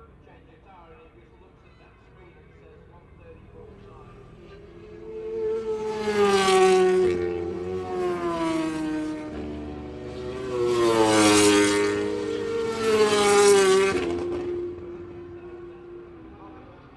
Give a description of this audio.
British Motorcycle Grand Prix 2003 ... Qualifying part one ... 990s and two strokes ... one point stereo mic to minidisk ...